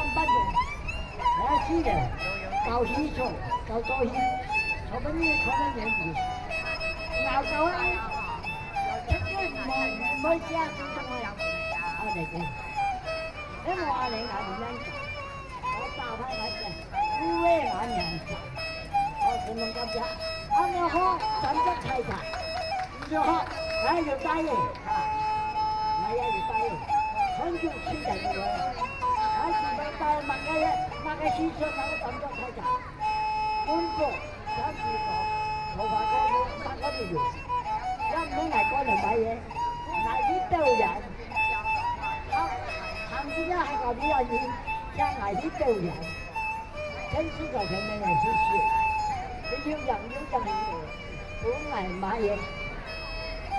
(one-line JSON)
{"title": "Taipei City Hakka Cultural Park - storyteller", "date": "2013-10-20 15:25:00", "description": "A very old age, old people use Hakka, Facing the crowd talking story, Binaural recordings, Sony PCM D50 + Soundman OKM II", "latitude": "25.02", "longitude": "121.53", "altitude": "12", "timezone": "Asia/Taipei"}